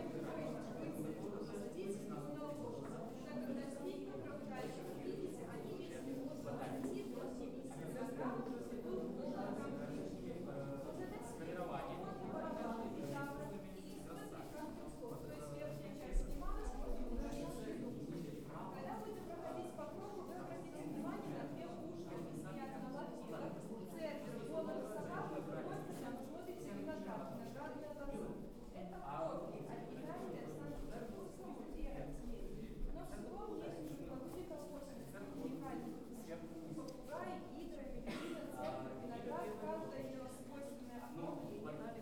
{"title": "Nesvizh, Belarus, in the castle", "date": "2015-08-01 18:10:00", "description": "in the weapon exposition hall", "latitude": "53.22", "longitude": "26.69", "altitude": "188", "timezone": "Europe/Minsk"}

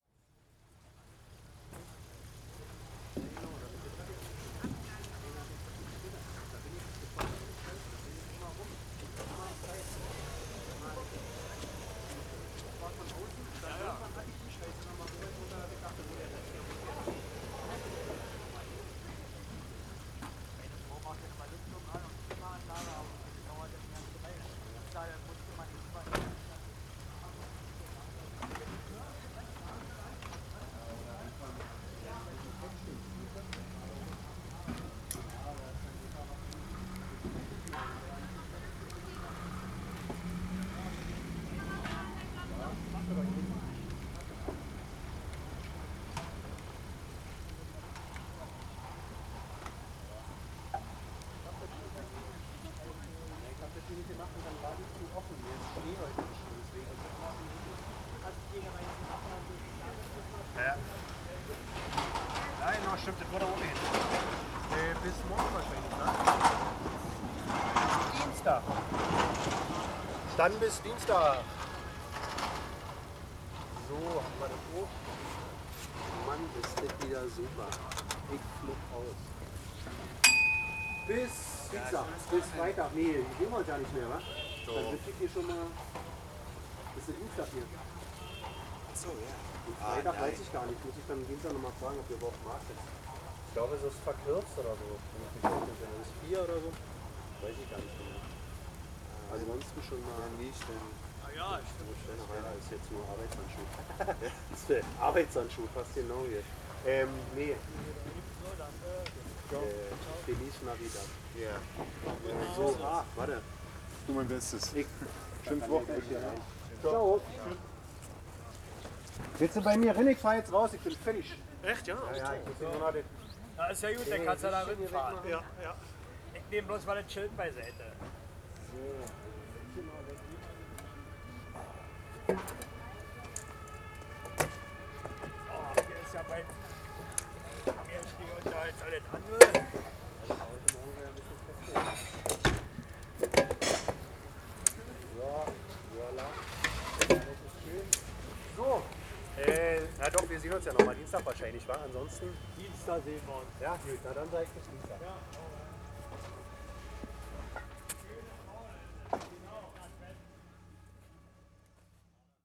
{"title": "berlin, maybachufer: weekly market - the city, the country & me: talking marketeers", "date": "2010-12-17 18:18:00", "description": "cold and snowy winter evening, market is finished, marketeers talking\nthe city, the country & me: december 17, 2010", "latitude": "52.49", "longitude": "13.42", "altitude": "41", "timezone": "Europe/Berlin"}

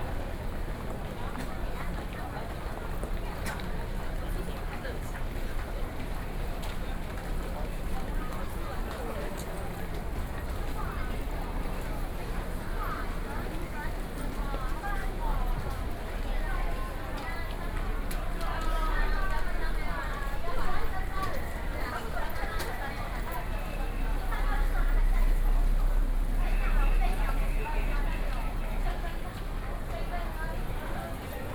{"title": "Taipei Main Station, Taiwan - walk", "date": "2013-07-09 17:24:00", "description": "Footsteps, Sony PCM D50 + Soundman OKM II", "latitude": "25.05", "longitude": "121.52", "altitude": "12", "timezone": "Asia/Taipei"}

